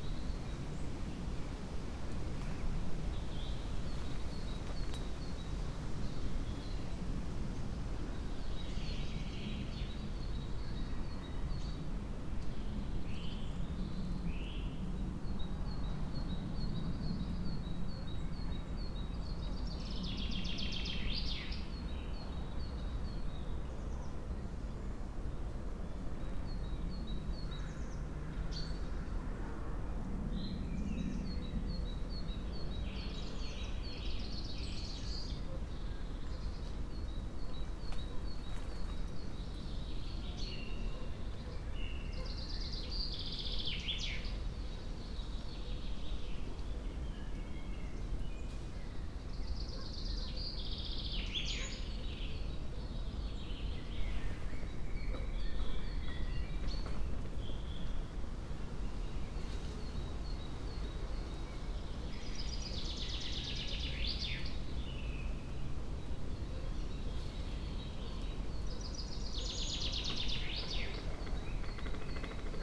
fallen tree, Piramida, Slovenia - creaking tree
birds, bees, winds, faraway train and chain saw ....